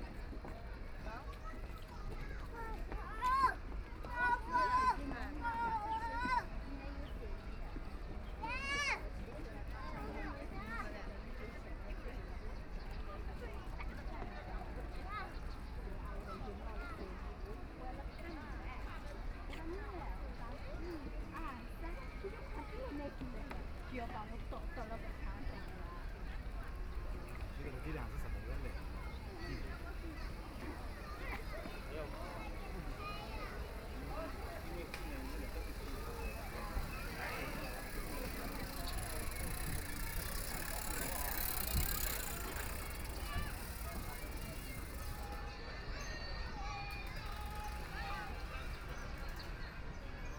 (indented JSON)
{
  "title": "上海虹口區 - Walking through the park",
  "date": "2013-11-23 10:50:00",
  "description": "Walking through the park, Walking to and from the crowd, Duck calls, Binaural recording, Zoom H6+ Soundman OKM II",
  "latitude": "31.27",
  "longitude": "121.50",
  "altitude": "7",
  "timezone": "Asia/Shanghai"
}